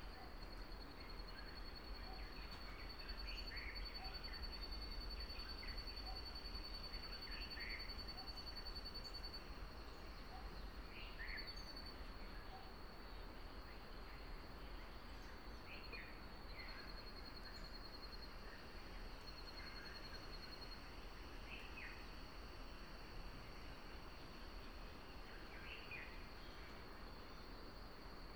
Soundscape of a remnant of rainforest east of the village of Abaca. The dummy head microphone facing south. Around 3 min in the recording a Giant Forest Honeyeater (Gymnomyza brunneirostris) can be heard calling. One of Fijis endemic birds. Recorded with a Sound Devices 702 field recorder and a modified Crown - SASS setup incorporating two Sennheiser mkh 20 microphones.